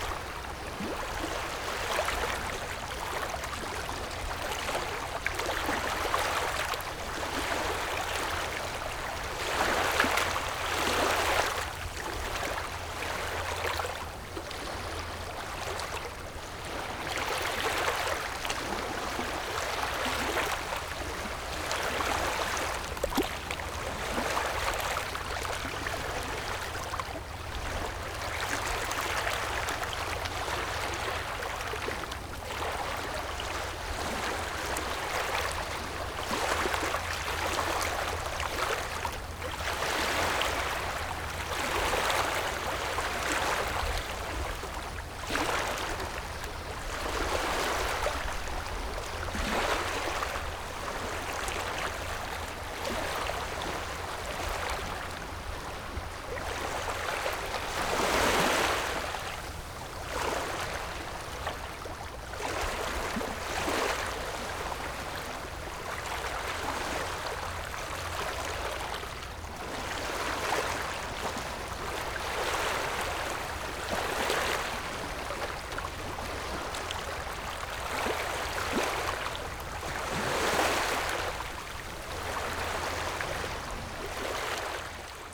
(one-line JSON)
{"title": "講美村, Baisha Township - Wave and tidal", "date": "2014-10-22 09:16:00", "description": "Wave and tidal, Wind\nZoom H6 + Rode NT4", "latitude": "23.62", "longitude": "119.61", "altitude": "7", "timezone": "Asia/Taipei"}